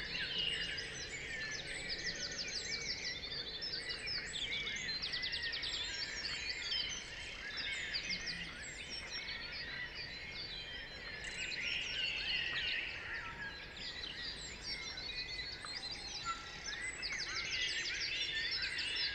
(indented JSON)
{
  "title": "Warburg Nature Reserve, Nr Henley on Thames UK - The Start of the Dawn Chorus and first hour",
  "date": "2018-05-07 04:06:00",
  "description": "We got to the site with a lovely half moon before the chorus had started. There were some Tawny Owls calling, the odd Pheasant, and then the first Robins heralded the beginning. There are Blackbirds, Song Thrushes, Pheasants, Wrens, Chiff-Chaffs, Wood Pigeons, Greater Spotted Woodpecker, Whitethroats, Hedge Sparrows, a Muntjack Deer barking, Great Tits, Carrion Crows, a car arriving, two people talking and laughing, and of course several aircraft. Recorded on a Sony M10 with a spaced pair of Primo EM 172 mic capsules.",
  "latitude": "51.59",
  "longitude": "-0.96",
  "altitude": "104",
  "timezone": "Europe/London"
}